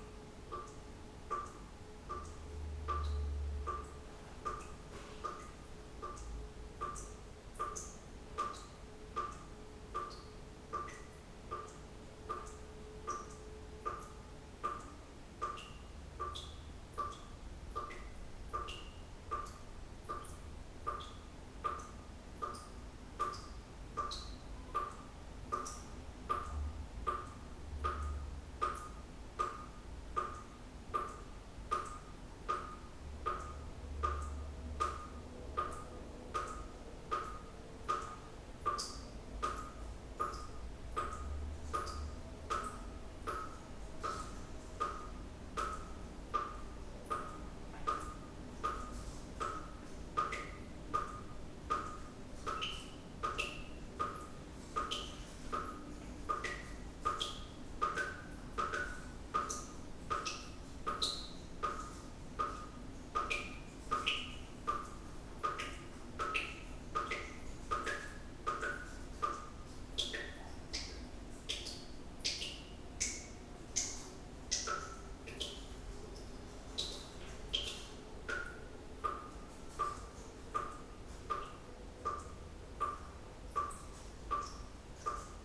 Stalingradlaan, Brussels, Belgium - dripping tap
dripping tap, kitchen at Stalingradlaan, saturday morning
(Sony PCM D50)